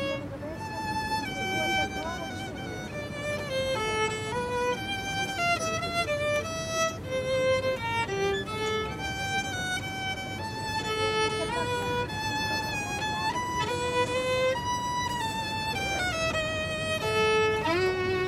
{
  "title": "Place de l'Albertine, Bruxelles, Belgique - A homeless man plays a piece of music on a violin",
  "date": "2022-05-25 14:00:00",
  "description": "A tree was planted 12 years ago to honor the homeless who died on the streets.\nEvery year the list of all those who died on the street is read here.\nA homeless man plays a piece of music on a violin.\nTech Note : Olympus LS5 internal microphones.",
  "latitude": "50.84",
  "longitude": "4.36",
  "altitude": "37",
  "timezone": "Europe/Brussels"
}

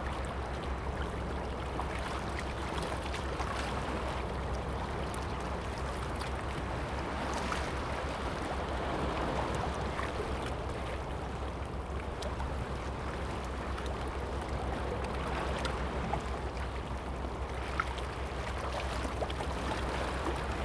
{
  "title": "西子灣, Gushan District, Kaohsiung - waves",
  "date": "2016-11-22 14:24:00",
  "description": "Sound of the waves, Beach\nZoom H2n MS+XY",
  "latitude": "22.62",
  "longitude": "120.26",
  "altitude": "1",
  "timezone": "Asia/Taipei"
}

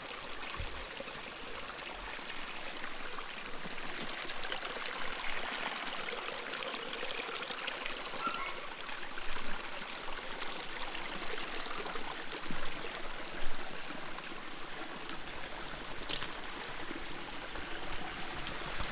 {
  "title": "Anatolia Manizales Nacimiento de Agua",
  "date": "2011-02-12 01:03:00",
  "description": "El nacimiento de Agua de la finca Anatolia, de la Familia de Duna.",
  "latitude": "5.08",
  "longitude": "-75.54",
  "altitude": "1930",
  "timezone": "America/Bogota"
}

